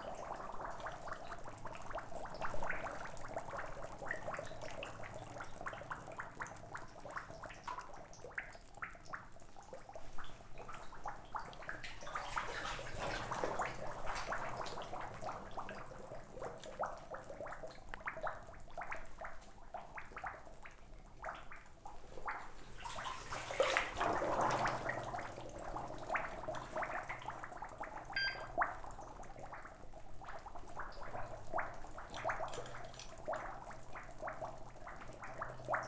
Saint-Cierge-la-Serre, France - Big bubbles big problems
In an underground mine, I'm trying to explore, but I encounter problems. My feet makes bubbles. It's nothing else than methane gas, an explosive gas. Incomes are massive. Detector is becoming crazy and it's shouting alert. In fact, this is a dangerous place.